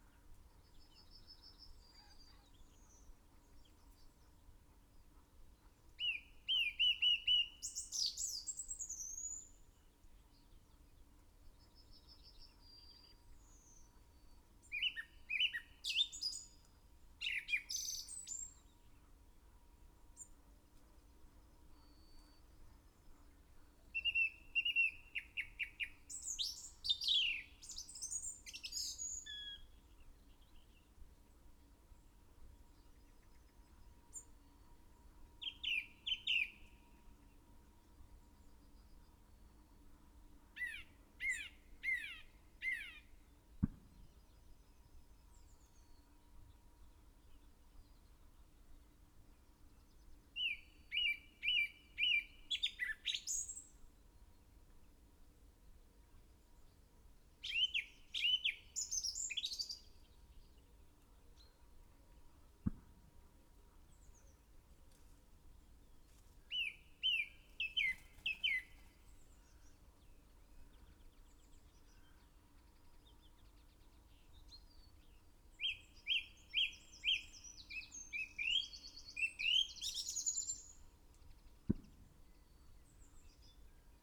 Green Ln, Malton, UK - song thrush in song ...
song thrush in song ... dpa 4060s clipped to twigs to Zoom H5 ... bird song ... calls from ... reed bunting ... yellowhammer ... wren ... blackbird ... whitethroat ... wood pigeon ... dunnock ... linnet ... tree sparrow ...
England, United Kingdom, 17 July 2020